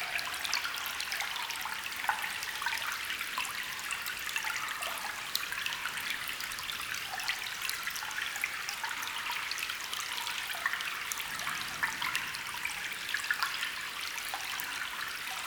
A small waterstream in an abandoned iron mine and far reverberation of our discussions.
France, 20 March, 09:45